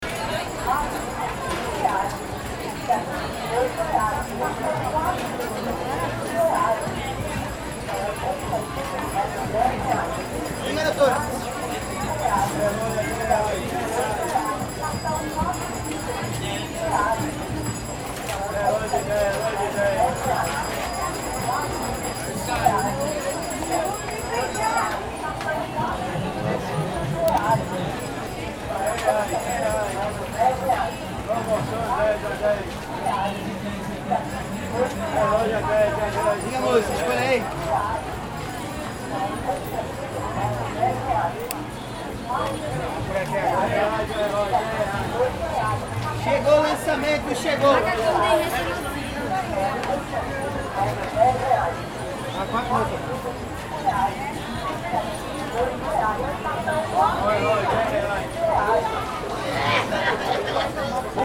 {
  "title": "São José, Recife - Pernambuco, Brazil - Cristhimas Week 2012- Afternoon",
  "date": "2012-12-22 16:06:00",
  "description": "Field Recording using a H4n Mics at Cristhimas Week",
  "latitude": "-8.07",
  "longitude": "-34.88",
  "altitude": "12",
  "timezone": "America/Recife"
}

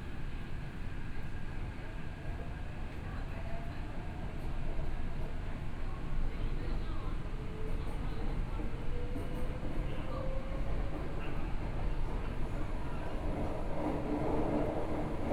{
  "title": "Tamsui Line, Taipei City - Tamsui Line (Taipei Metro)",
  "date": "2014-02-06 12:28:00",
  "description": "from Beitou Station to Mingde Station, Binaural recordings, Zoom H4n + Soundman OKM II",
  "latitude": "25.12",
  "longitude": "121.51",
  "timezone": "Asia/Taipei"
}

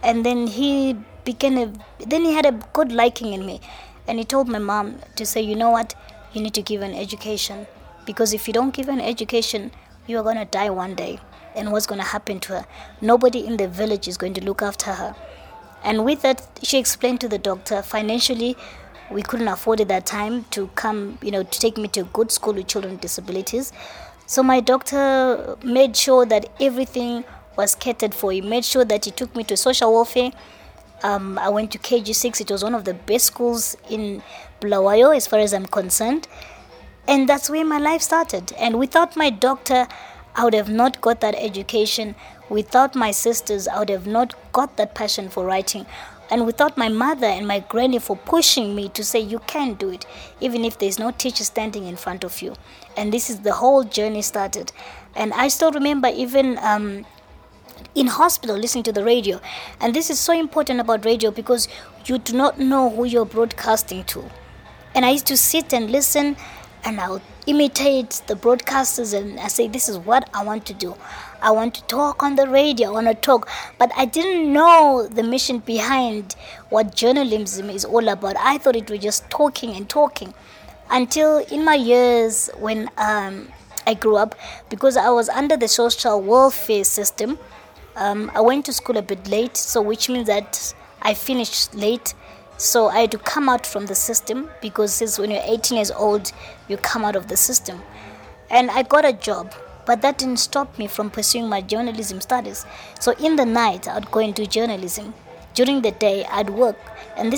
{
  "title": "Makokoba, Bulawayo, Zimbabwe - Soneni Gwizi talking life…",
  "date": "2012-10-27 12:18:00",
  "description": "And here’s the beginning of the interview with Soneni, the beginning of her story….",
  "latitude": "-20.15",
  "longitude": "28.59",
  "altitude": "1342",
  "timezone": "Africa/Harare"
}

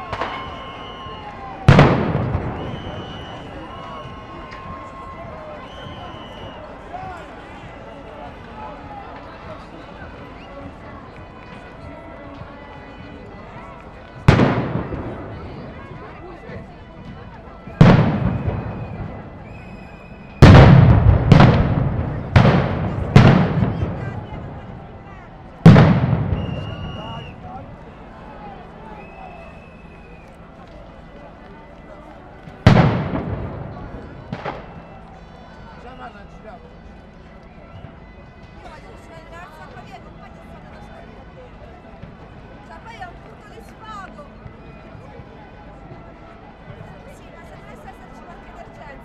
{
  "title": "Piazza S.Giovanni",
  "date": "2011-10-15 17:55:00",
  "description": "People are screaming against police throwing tear gases",
  "latitude": "41.89",
  "longitude": "12.51",
  "altitude": "47",
  "timezone": "Europe/Rome"
}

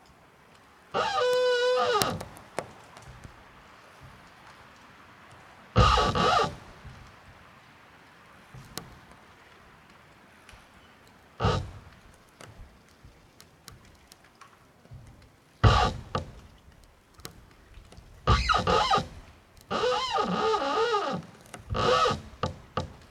{
  "title": "Lithuania, Utena, tree that speaks",
  "date": "2011-02-27 15:25:00",
  "description": "another tree in wind that loudly proclaims its rights",
  "latitude": "55.47",
  "longitude": "25.59",
  "altitude": "132",
  "timezone": "Europe/Vilnius"
}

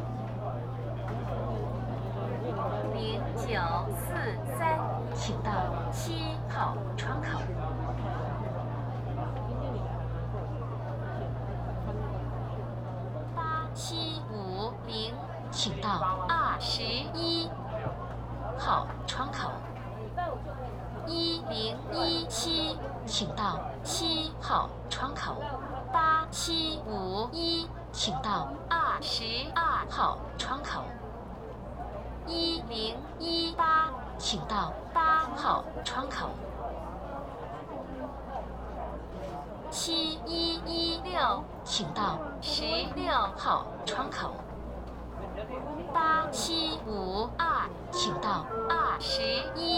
{
  "title": "Ministry of Foreign Affairs, Taipei city - Waiting for passport",
  "date": "2013-08-05 15:21:00",
  "description": "Waiting for passport, Counter broadcasting, Sony PCM D50 + Soundman OKM II",
  "latitude": "25.04",
  "longitude": "121.52",
  "altitude": "20",
  "timezone": "Asia/Taipei"
}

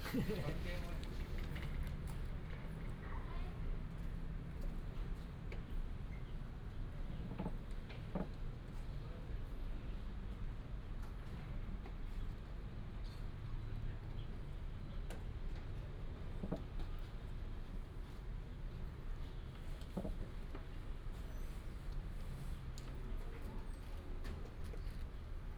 {
  "title": "Dalin Station, Chiayi County - At the station platform",
  "date": "2018-02-15 10:54:00",
  "description": "At the station platform, lunar New Year, birds sound, The train passed\nBinaural recordings, Sony PCM D100+ Soundman OKM II",
  "latitude": "23.60",
  "longitude": "120.46",
  "altitude": "33",
  "timezone": "Asia/Taipei"
}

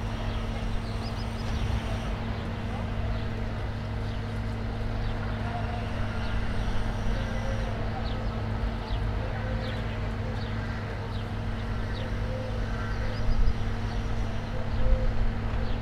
{
  "title": "Sikorskiego, Gorzów Wielkopolski, Polska - Renovations in the city.",
  "date": "2020-04-23 15:44:00",
  "description": "Noises of the renovation works in the city centre. Some heavy machinery in the background.",
  "latitude": "52.73",
  "longitude": "15.23",
  "altitude": "25",
  "timezone": "Europe/Warsaw"
}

